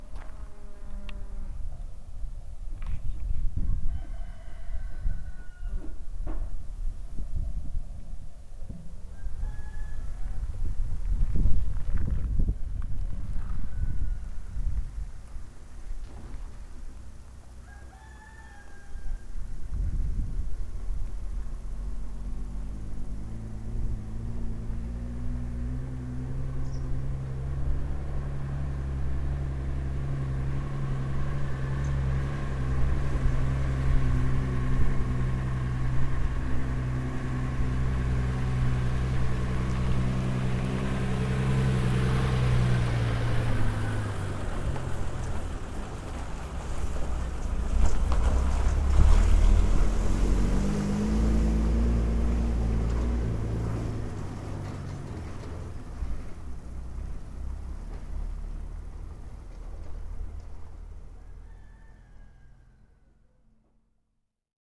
Kondopozhsky District, Republic of Karelia, Russia - Berezovka, quiet countryside
Binaural recordings. I suggest to listen with headphones and to turn up the volume
It was a warm afternoon in Berezovka, near Kondopoga, in the region of Karelia.
Recordings made with a Tascam DR-05 / from Lorenzo Minneci